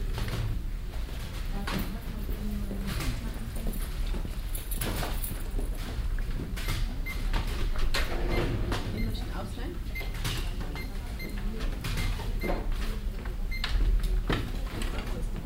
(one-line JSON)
{"title": "cologne, josef-haubricht hof, stadtbuecherei - cologne, josef-haubricht hof, stadtbuecherei", "date": "2008-06-01 09:42:00", "description": "soundmap: köln/ nrw\nzentrale stadtbücherei am josef haubricht hof - eingangshalle - ausleihe\nproject: social ambiences/ listen to the people - in & outdoor nearfield recordings", "latitude": "50.93", "longitude": "6.95", "altitude": "56", "timezone": "Europe/Berlin"}